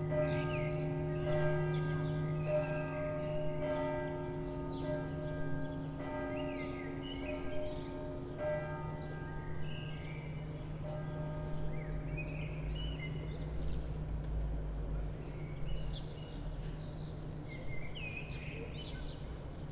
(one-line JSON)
{"title": "University Maribor - Stolnica church bells", "date": "2008-06-06 12:00:00", "description": "Nice ringing of the bells of Stolnica church.", "latitude": "46.56", "longitude": "15.64", "altitude": "275", "timezone": "Europe/Ljubljana"}